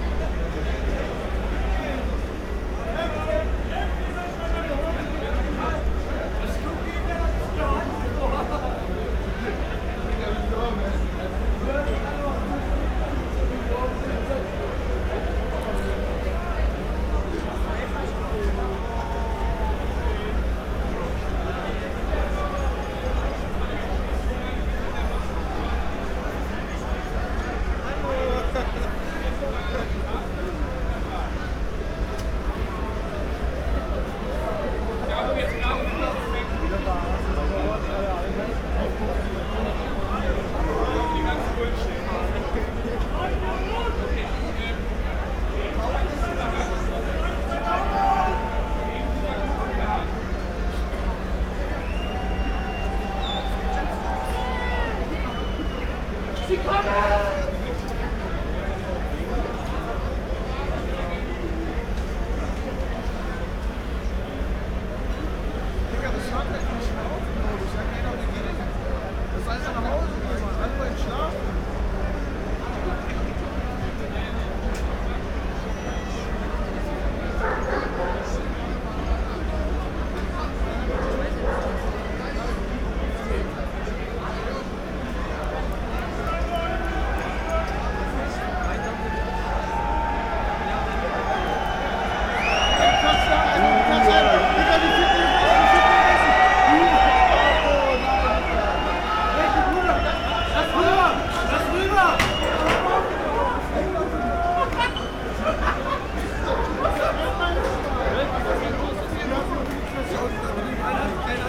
Centrum Kreuzberg, Berlin, Deutschland - May 1st night ambience, party people and police
party people and police playing cat & mouse at Zentrum Kreuzberg
the usual small riots at this day. Nothing much happens.
(Tascam IXJ2, Primo EM172)
1 May, 23:30